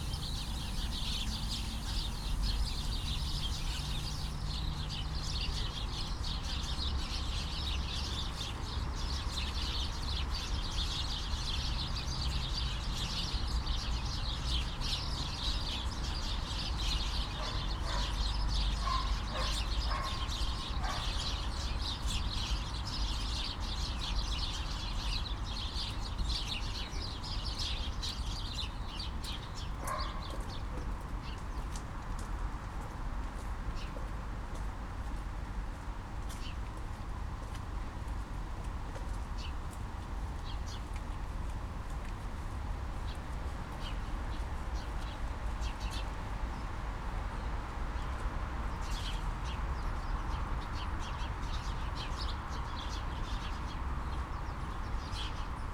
Palacio de Cristal / Invernadero Arganzuela, outside
Recorded at the entrance of this huge greenhouse / botanic garden. A scene involving a big tree, a bunch of interactive birds, some automatic mechanisms from the building, a naughty dog, a static phonographist and the city...
Madrid, Spain, 21 November